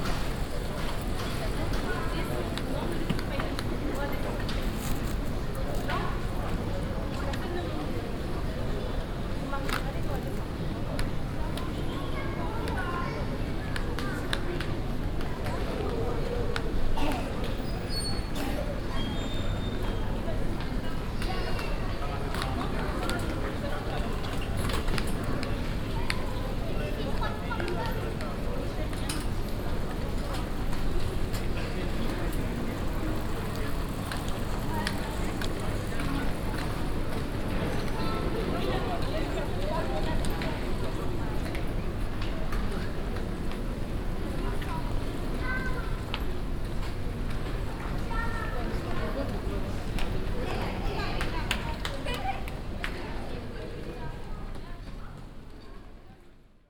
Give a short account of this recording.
At the arrival zone of Marseille airport. The sound of suitcases with different type of transportation roles inside the crowded hall and different type of steps on the stone plated ground. international city scapes - topographic field recordings and social ambiences